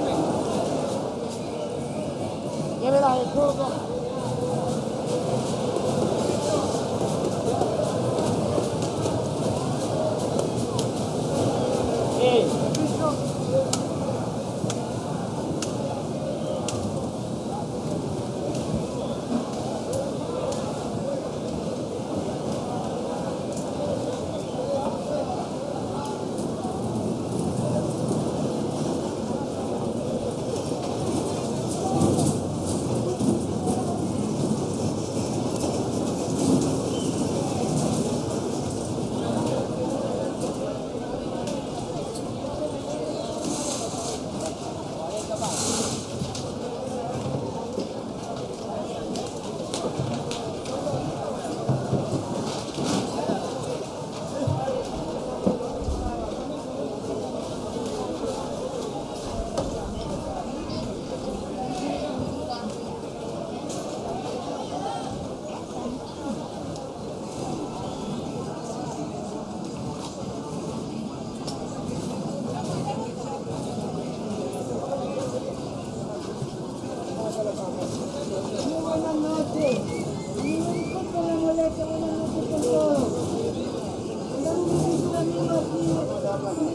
Guayaquil Ecuador - Mercado Caraguay
Caraguay Market located in the south of the city of Guayaquil Ecuador. This market is popular for selling seafood.